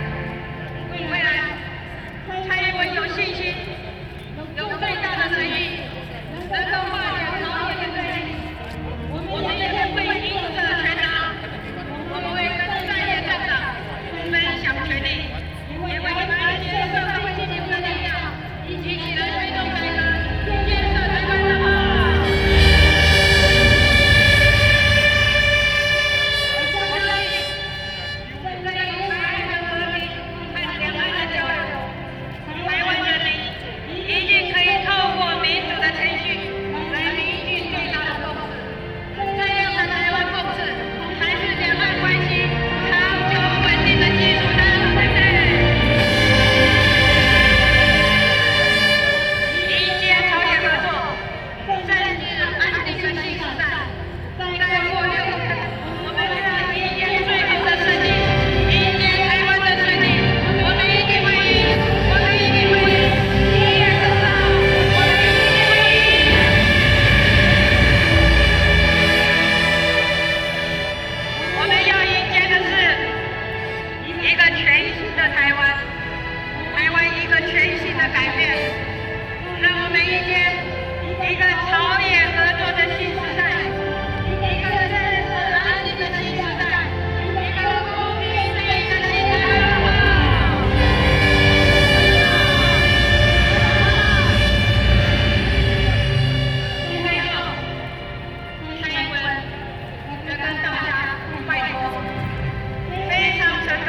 Election party, The speech of the opposition, Rode NT4+Zoom H4n